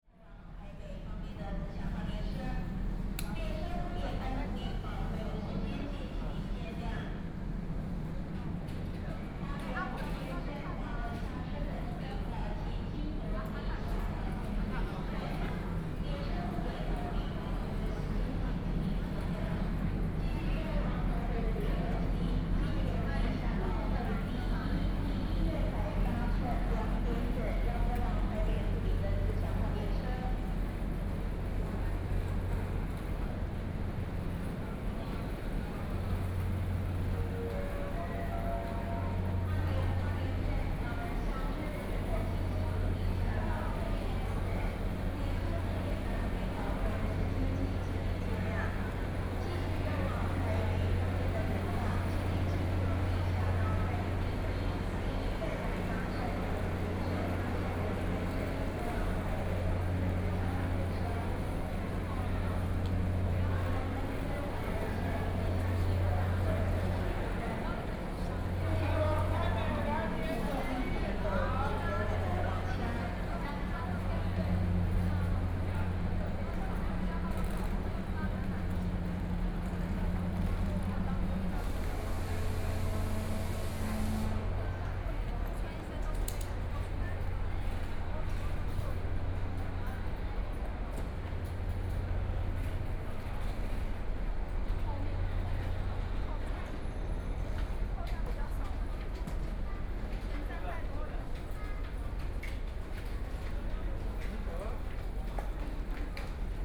Hualien Station, Hualien City - soundwalk
Messages broadcast station, From the station platform, Via underground passage, Then out of the station, Binaural recordings, Zoom H4n+ Soundman OKM II
Hualian City, Hualien County, Taiwan, 18 January 2014